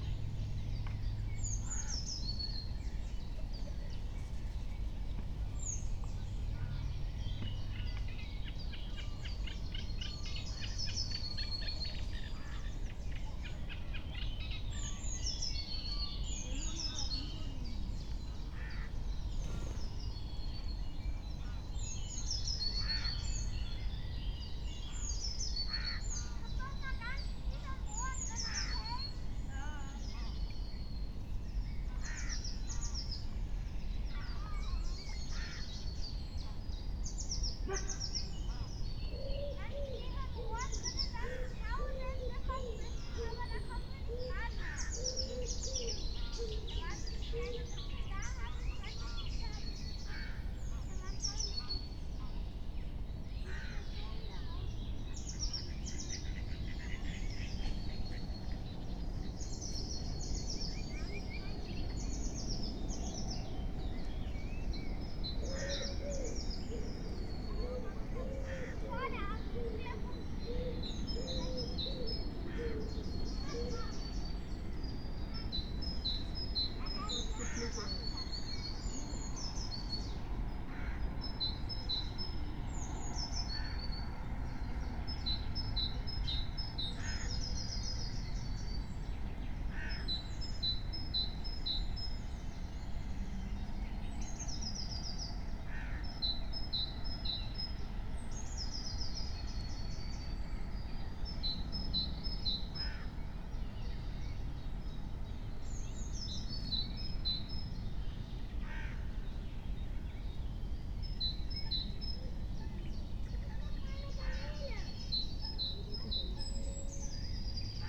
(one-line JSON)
{"date": "2021-05-15 08:50:00", "description": "08:50 Berlin, Buch, Mittelbruch / Torfstich 1 - pond, wetland ambience\nlate morning ambience", "latitude": "52.65", "longitude": "13.50", "altitude": "57", "timezone": "Europe/Berlin"}